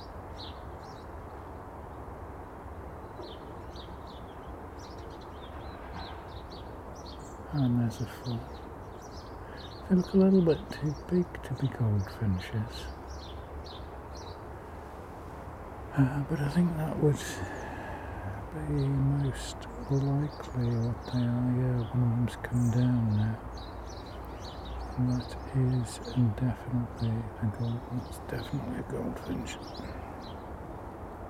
Contención Island Day 3 inner southeast - Walking to the sounds of Contención Island Day 3 Thursday January 7th
The Drive Moor Crescent Moorfield
Stand in the doorway of a shed
A flock of goldfinches flies
into the top of a roadside tree
then drift drop down onto one of the plots
7 January, 10:00